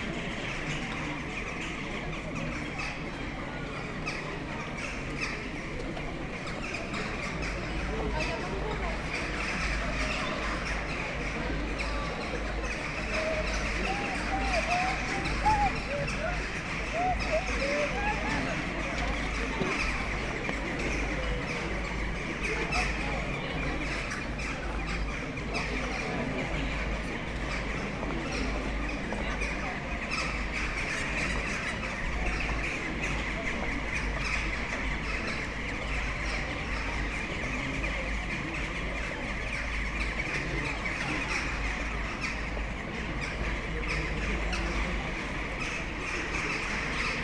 Place de l'Hôtel de ville, Aix-en-Provence - evening ambience, jackdaws
buzz and hum of people sitting outside bars at Place de l'Hôtel de ville, Aix-en-Provence. a big bunch of excited jackdaws in the trees.
(PCM D50, EM172)